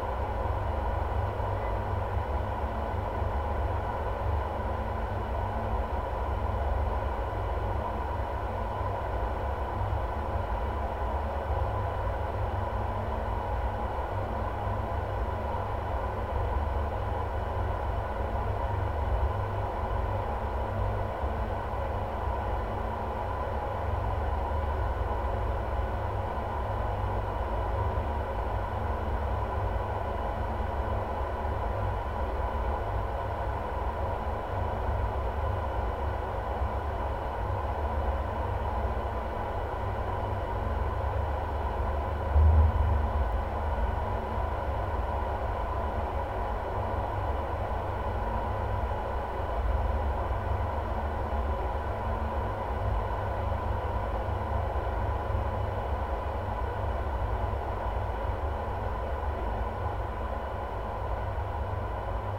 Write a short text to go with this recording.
contact microphones on a fence near dam